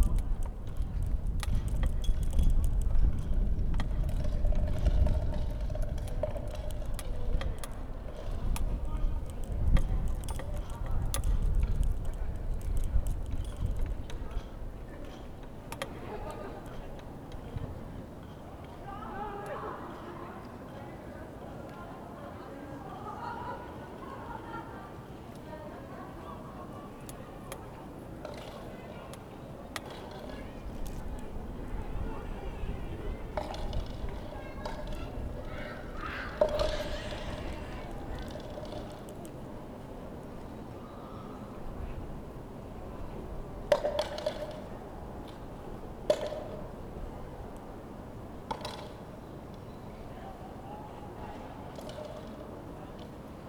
Schloßbezirk, Karlsruhe, Germany - Walz fuer ein Plastik
the empty terrasse of the Schlosscafe where one plastic cup is dancing in the wind.